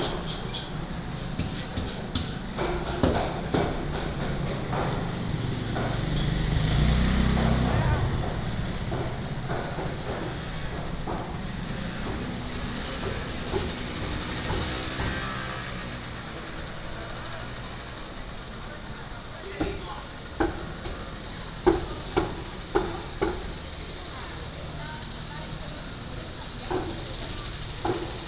Walking in the indoor market, Traffic sound, Vendors
Shengang Township, Changhua County, Taiwan, 15 February 2017, 09:48